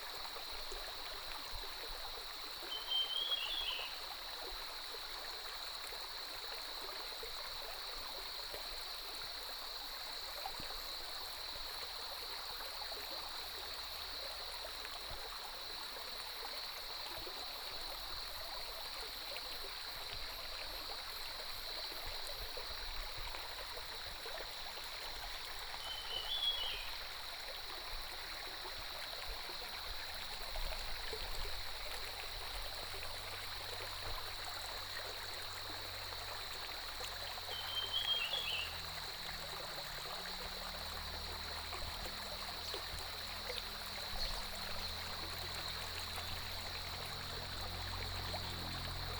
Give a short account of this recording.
Birdsong, Stream, Cicadas cry, Early morning